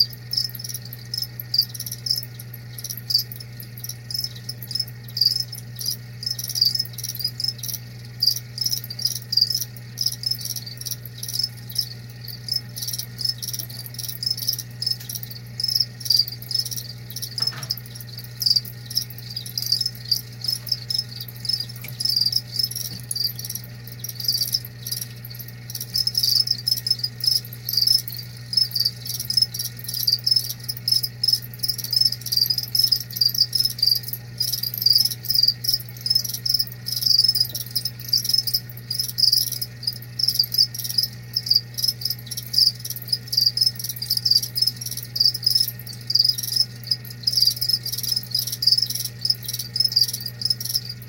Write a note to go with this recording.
Pet Crickets for my pet frogs floating on an island above my carnivorous pet fish